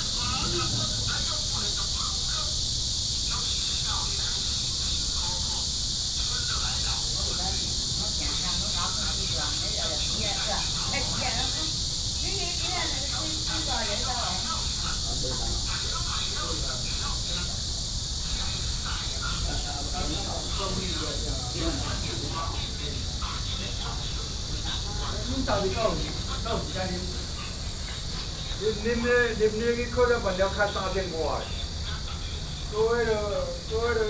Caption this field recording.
Cicadas called, in the Park, Binaural recordings, Sony PCM D50 + Soundman OKM II